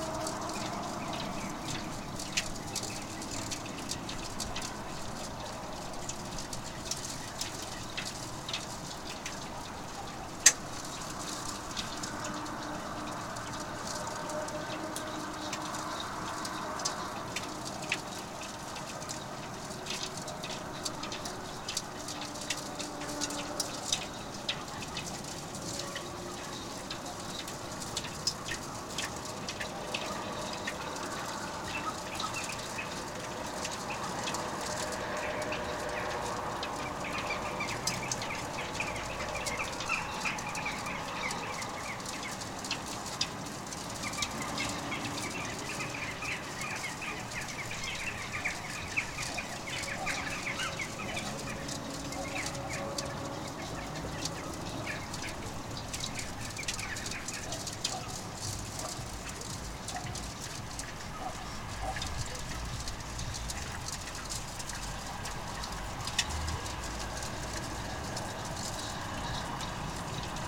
Utena, Lithuania, tiny ice
wind and waves are playing with tiny ice on the lake